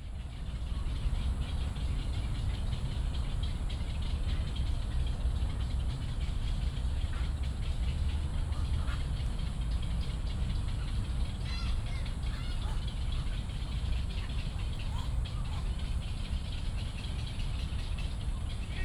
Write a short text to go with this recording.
Bird calls, Frogs chirping, in the park